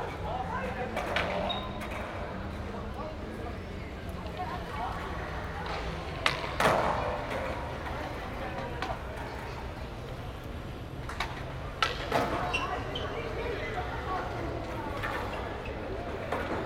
{"title": "Sao Paulo, parque Ibirapuera, skaters under concrete roof with concrete floor, sunday afternoon", "latitude": "-23.59", "longitude": "-46.66", "altitude": "756", "timezone": "Europe/Berlin"}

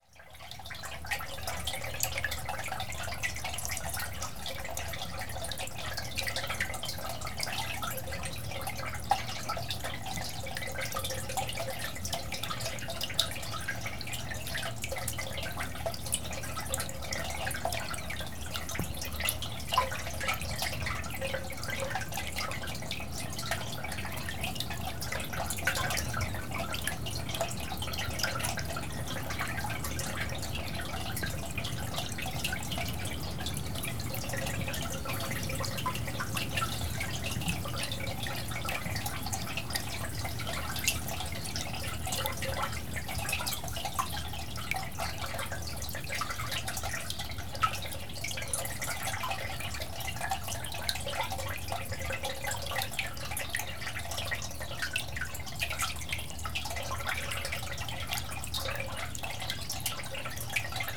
{"title": "Morasko, Poligonowa Road - brook activity in a concrete pipe", "date": "2013-04-25 11:34:00", "description": "a fragile, sparkling, whispering brook reverberated in a concrete pipe.", "latitude": "52.49", "longitude": "16.91", "altitude": "97", "timezone": "Europe/Warsaw"}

